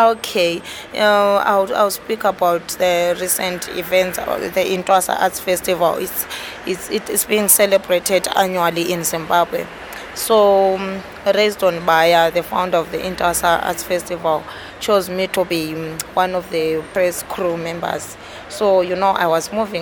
Main Street Office Block, Bulawayo, Zimbabwe - Hope above Bulawayo
Hope Ranganayi tells of her stories as a woman filmmaker who is wheelchair bound. The conversation took place on a balcony of an office block above Bulawayo where Hope is working as a graphic designer.